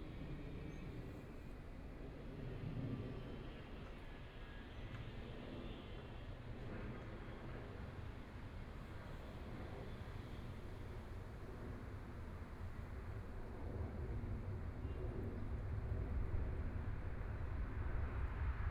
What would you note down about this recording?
Aircraft flying through, Environmental sounds, in the Street, Suburbs, Traffic Sound, Beat sound construction site, Binaural recording, Zoom H6+ Soundman OKM II